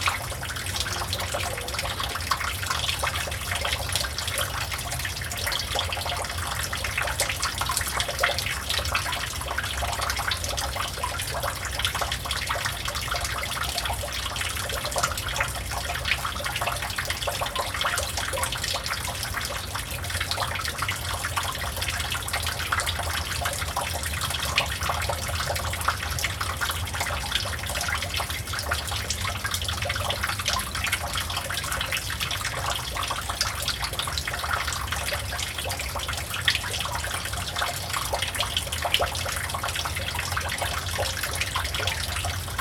Rue Dinetard, Toulouse, France - after the rain
water, noise, water droplet, water droplet falling from the roof
France métropolitaine, France, 1 November 2021